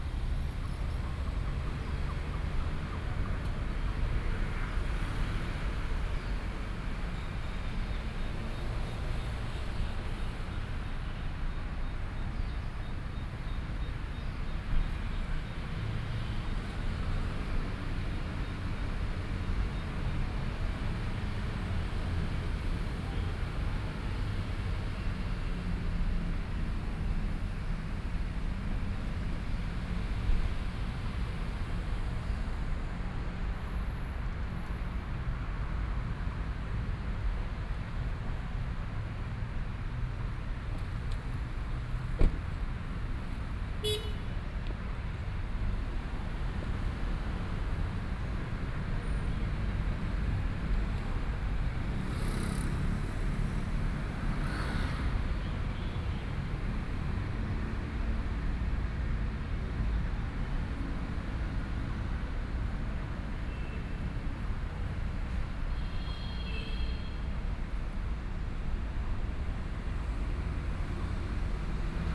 中山區聚葉里, Taipei City - Small park
Environmental sounds, Traffic Sound, Birds
Zhongshan District, Taipei City, Taiwan, 3 April